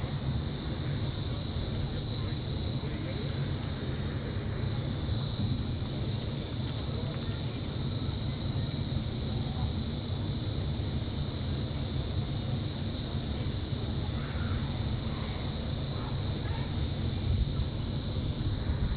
Rotermani air ventilation system, WLD - Toomas Thetlff : Rotermani air ventilation system, WLD
Tallinn, Estonia, 19 July 2011